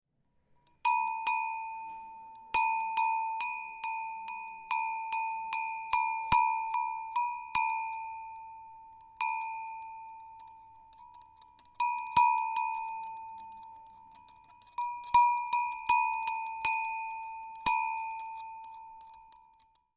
21.01.2009 13:50
kuhglocke, vermutlich aus dem touristenladen, mit der aufschrift "kleinwalsertal" / cowbell, probably from the tourist shop, with label "kleinwalsertal"
Berlin, Deutschland, 21 January 2009, 13:50